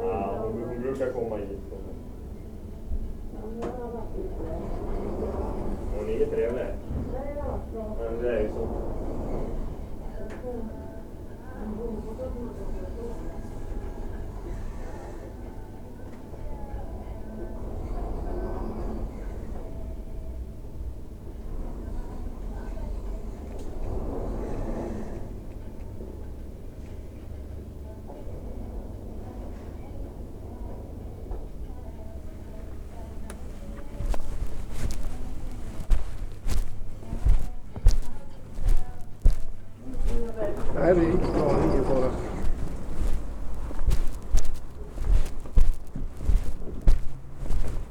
On the World Listening Day of 2012 - 18th july 2012. From a soundwalk in Sollefteå, Sweden. Shopping food at Coop Konsum shop in Sollefteå. WLD